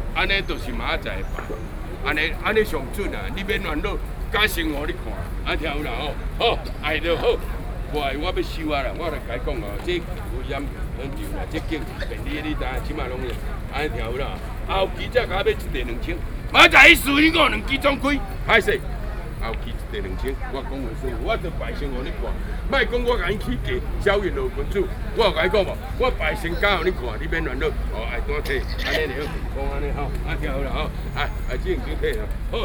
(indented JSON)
{
  "title": "Wanhua District, Taipei city, Taiwan - The crowd discuss Lotto number",
  "date": "2012-11-10 15:32:00",
  "latitude": "25.04",
  "longitude": "121.50",
  "altitude": "9",
  "timezone": "Asia/Taipei"
}